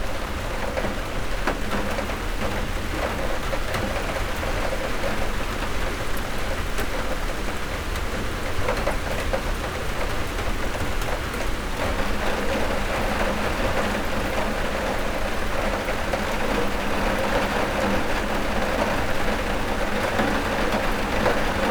From an overnight recording of rain on a horizontal metal door. Mix Pre 6 II with 2 x Sennheiser MKH 8020s.
West Midlands, England, United Kingdom, 27 January 2021, 03:23